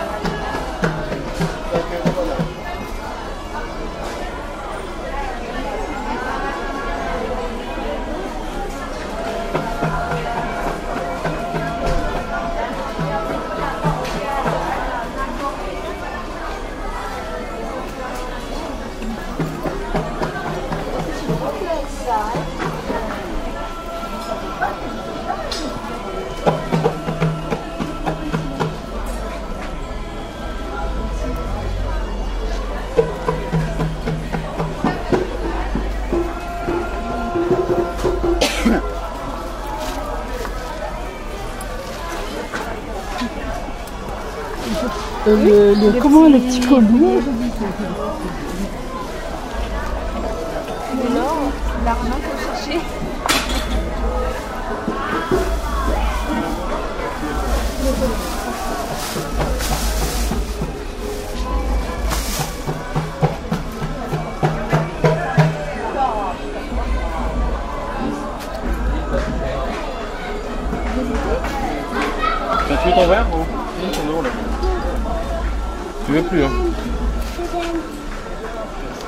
ballade dans le marche couvert de saint pierre de la reunion